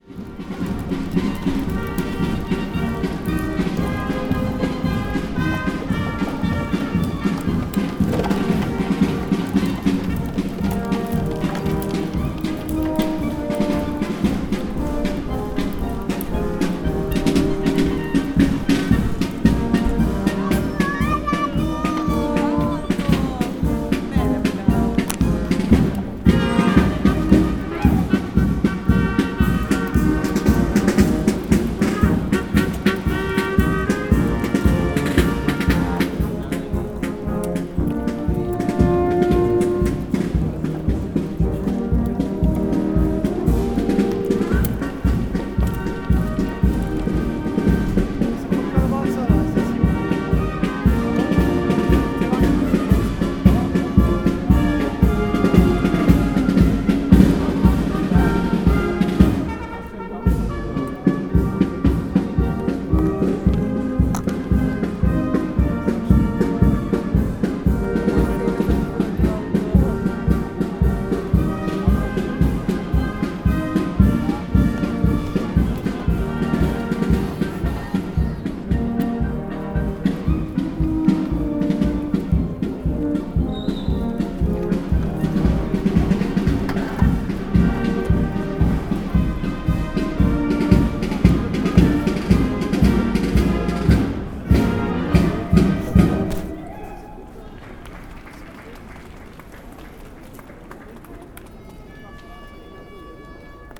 Brno city firemen streetmusic
streetmusic with firemens, dance on the street, talks in the background
4 May 2011, 10:36